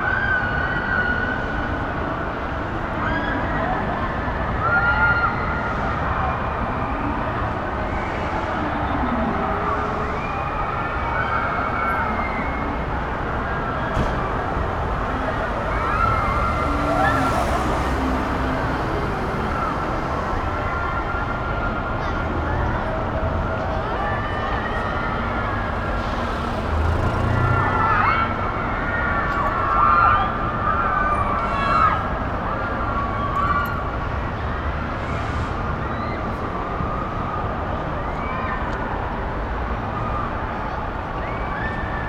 sound (mostly shouting girls) of the nearby christmas fun fair between tall houses at schillingstr.